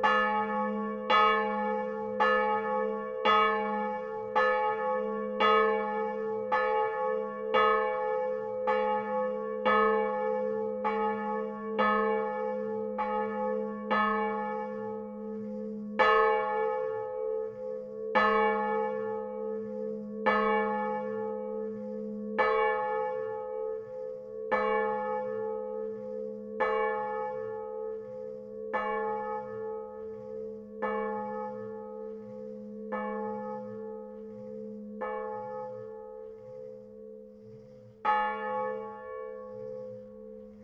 Chaumont-Gistoux, Belgique - Bonlez, the bells
The Bonlez bell manually ringed in the tower. It's a very poor system and dirty place. This is not ringed frequently, unfortunately.